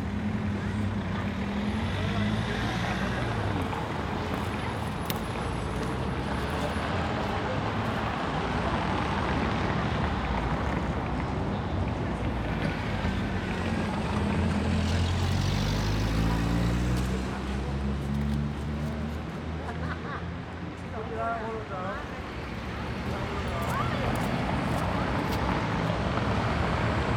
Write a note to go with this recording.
Soldiner Straße/Koloniestraße, Berlin - traffic, passers-by. Soldiner Straße and Koloniestraße are both streets with moderate traffic. After a few minutes, several workers gather around the two bus stops. They continue their chatting and laughing from one side of the street to the other until they finally catch their bus. Thanks to the near Tegel airport there is no place in Soldiner Kiez without aircraft noise. [I used the Hi-MD-recorder Sony MZ-NH900 with external microphone Beyerdynamic MCE 82], Soldiner Straße/Koloniestraße, Berlin - Verkehr, Passanten. Sowohl die Soldiner Straße als auch die Koloniestraße sind mäßig befahren. Nach einer Weile sammeln sich mehr und mehr Arbeiterinnen an den beiden Bushaltestellen. Bis sie einsteigen und abfahren, führen sie ihr Gespräch auch über die Straße hinweg fort. Durch den nahen Flughafen Tegel gibt es keinen Ort im Soldiner Kiez, an dem nicht in regelmäßigen Abständen Fluglärm zu hören wäre.